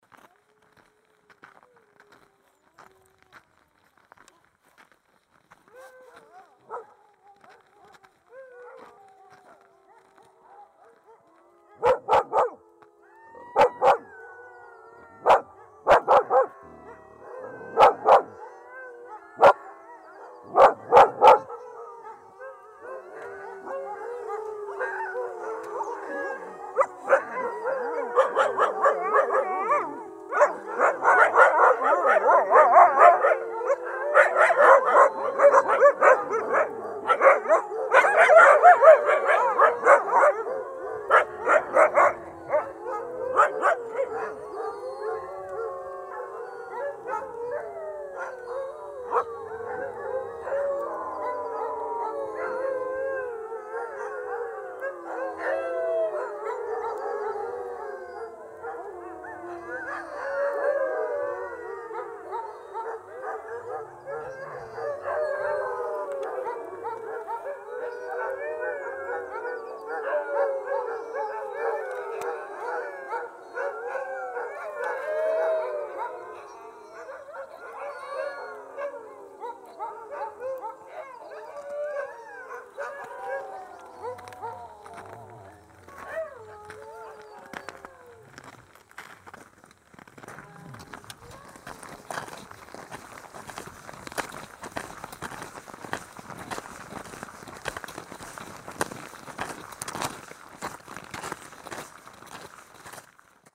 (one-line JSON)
{"title": "Lučany nad Nisou, Czech Republic - huskies", "date": "2013-03-11 09:27:00", "description": "my dog communicated with huskies.cz", "latitude": "50.77", "longitude": "15.20", "altitude": "718", "timezone": "Europe/Prague"}